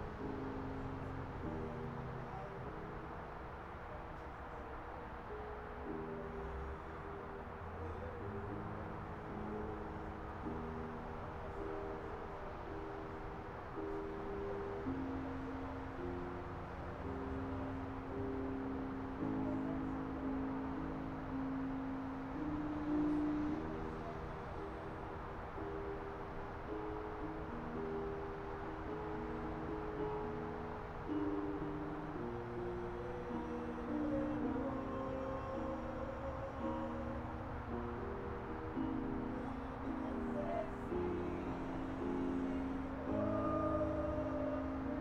Hyde Park, Austin, TX, USA - song night on a monday
sounds of the highway, dogs, and song
11 January 2016, 19:55